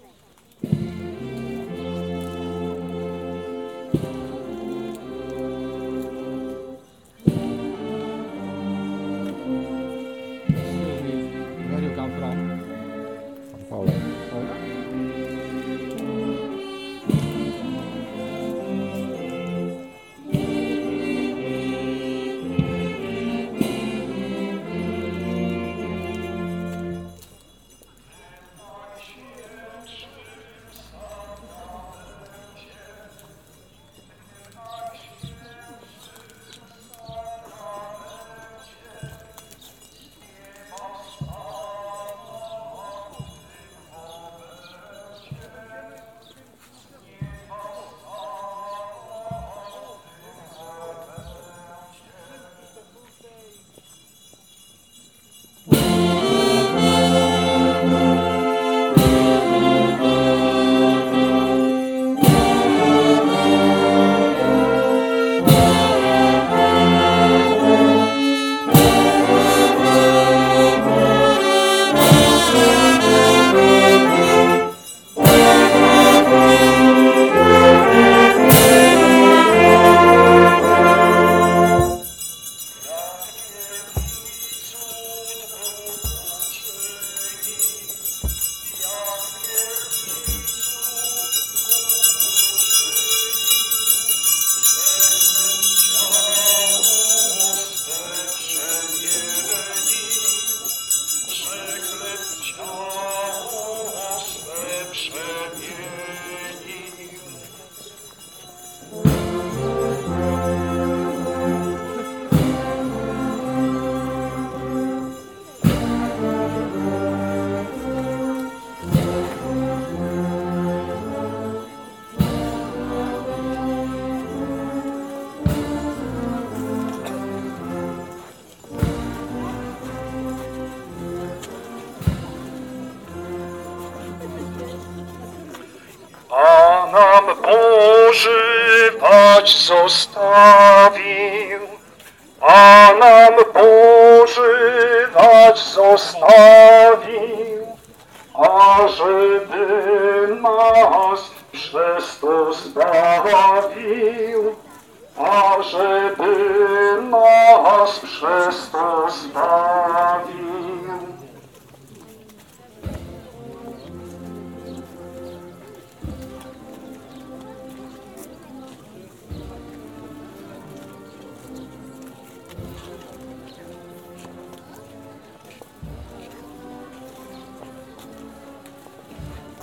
Spycimierz, Poland - Corpus Christi procession ( binaural)
Corpus Christi procession @ Spycimierz, title song : U drzwi Twoich stoję Panie ( I stand at the door of your Lord )
Every year, thousands of tourists flock to the village of Spycimierz with its 400 inhabitants, 75 km north-west of Łódź. They are drawn by the carpets of flowers which the people of Spycimierz use to decorate the Corpus Christi procession route in observance of a 200-year-old tradition. Visitors will be able to view floral depictions of Bible scenes accompanied by geometric and floral images along a two-kilometre stretch of road, which are first prepared using sand that is then filled with leaves, grass and flowers gathered for days. The carpets are so splendid that local authorities decided to establish a Corpus Christi Trail to attract tourists throughout the entire year.